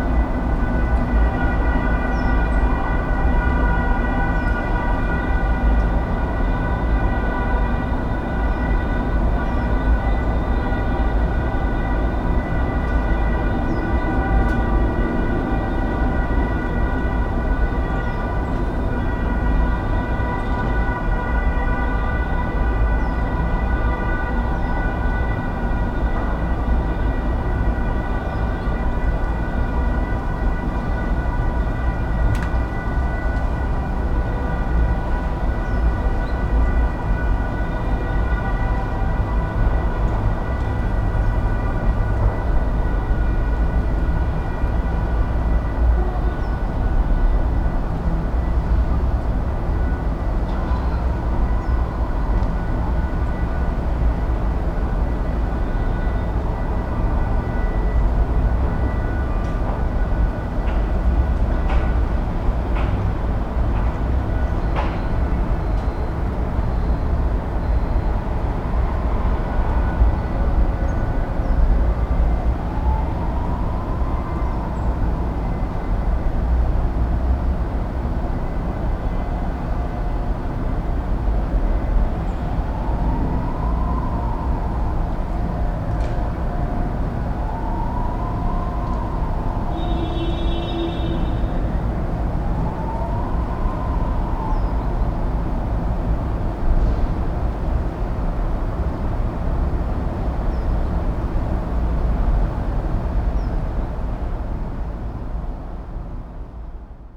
{"title": "Brussels, Rue Capouillet, Balcony inner courtyard. - Brussels, Rue Capouillet, traffic jam", "date": "2012-01-07 15:54:00", "description": "traffic jam for sales or maybe a wedding, not sure.", "latitude": "50.83", "longitude": "4.35", "altitude": "65", "timezone": "Europe/Brussels"}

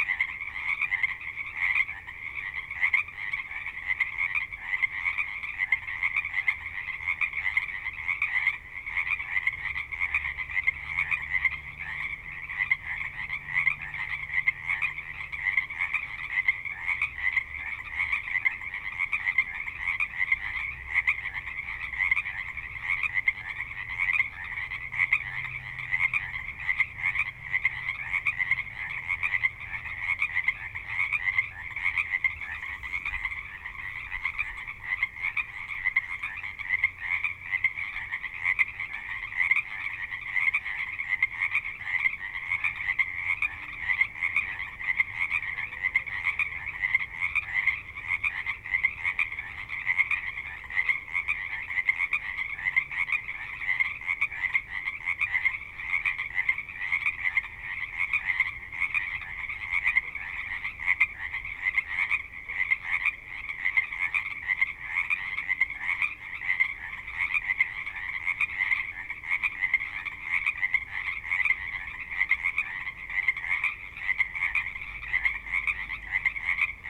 {"title": "Reno, Nevada, USA - Frogs in Reno", "date": "2021-04-21 20:20:00", "description": "Frogs in a wetland near Reno, NV. Dog barking occasionally.", "latitude": "39.43", "longitude": "-119.74", "altitude": "1360", "timezone": "America/Los_Angeles"}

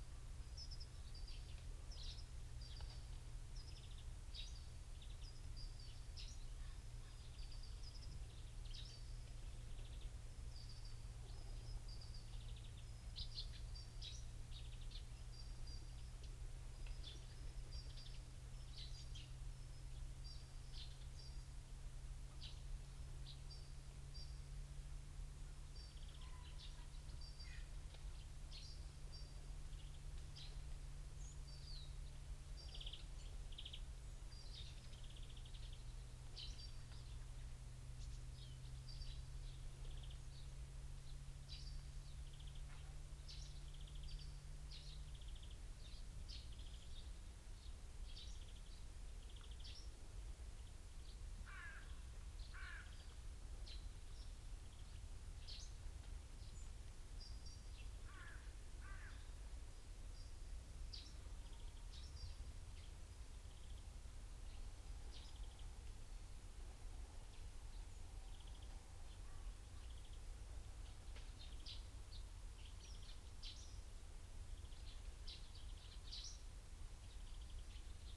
{"title": "Luttons, UK - Pheasants run through it ...", "date": "2010-10-10 07:30:00", "description": "Soundscape ... the only constant being pheasant calls ... bird calls from ... carrion crow ... blackbird ... dunnock ... robin ... long-tailed tit ... great tit ... wood pigeon ... great tit ... treecreeper ... goldfinch ... binaural dummy head on tripod ... background noise ... traffic ...", "latitude": "54.12", "longitude": "-0.57", "altitude": "99", "timezone": "Europe/Berlin"}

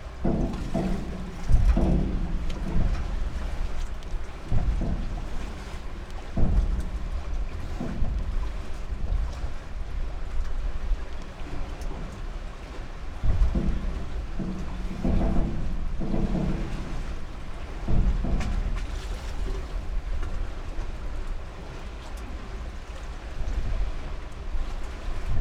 {"title": "Sang Jung-do disused ferry wharf - Sang Jung-do disused ferry wharf （상中島 부두）", "date": "2019-03-17 15:00:00", "description": "these small islands in Chuncheon lake arenow connected by a new bridge system...the former ferry services have been made redundant...one passenger ferry remains tethered to this wharf...recorded first from ferry side then from the boat side...some turbulence in the recording, nonetheless the low frequency knocking of the boat and pier are of interest...", "latitude": "37.90", "longitude": "127.71", "altitude": "74", "timezone": "Asia/Seoul"}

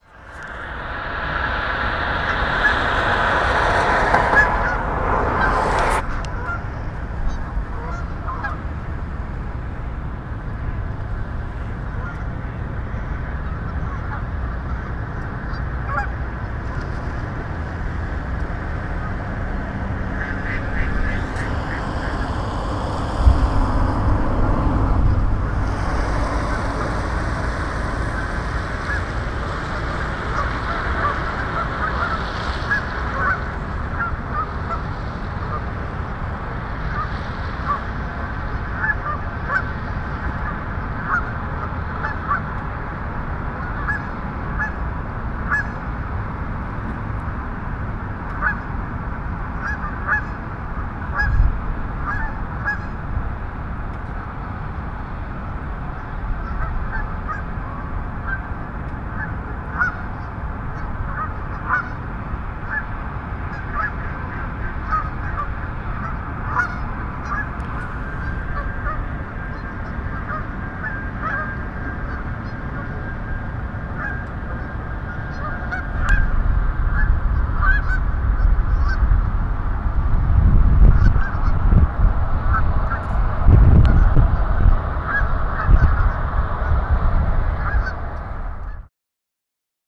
{"title": "Cedar Creek Park, Parkway Boulevard, Allentown, PA, USA - Evening Ducks by The Pond", "date": "2014-12-09 08:50:00", "description": "This sound was recorded at the bank of Cedar Creek park, directly behind Muhlenberg College campus late in the day. There were not many cars or people around, but instead an unlikely gathering of ducks at the bank of the pond making unique communicative sounds.", "latitude": "40.59", "longitude": "-75.51", "altitude": "93", "timezone": "America/New_York"}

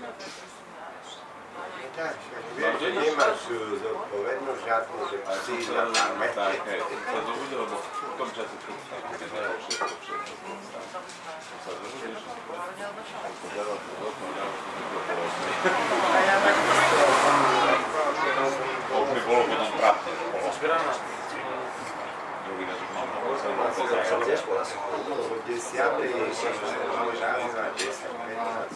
{"title": "Staré Mesto, Slovenská republika - saturday lunch at Michaela", "date": "2013-08-17 15:20:00", "description": "Smells like the 90's Restaurant Michaela, known for its meat jelly.", "latitude": "48.16", "longitude": "17.11", "altitude": "155", "timezone": "Europe/Bratislava"}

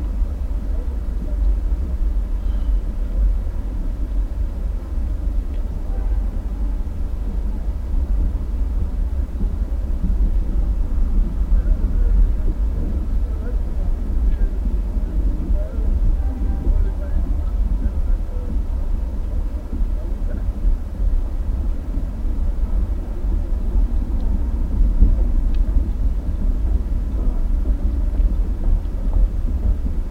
{"title": "Spielbudenplatz, tankstelle, der arme teich", "date": "2004-12-11 23:30:00", "description": "der arme teich, ein kleines Bassein mit Wasser, gelegen an der Reeperbahn in St. Pauli, dem Stadtviertel Hamburgs mit den wenigsten Grünflächen, stellte eine Ausnahmeerscheinung in der Reeperbahn dar. Das Bassein war von ein paar Koniferen umgeben und durch einen hohen Zaun vor dem Betreten abgesichert. Im Zuge des Umbaus des Tigerimbisses verschwand das Wasserbecken 2006. Die Aufnahmen stammen aus dem Jahr 2004 und wurden mit einem Hydrofon (Unterwassermikrofon) und einem Originalkopfmikrofon gemacht. Das Soundscape bildet zuerst den Sound unter Wasser ab und wechselt dann zu dem Geschehen über Wasser.", "latitude": "53.55", "longitude": "9.97", "altitude": "21", "timezone": "Europe/Berlin"}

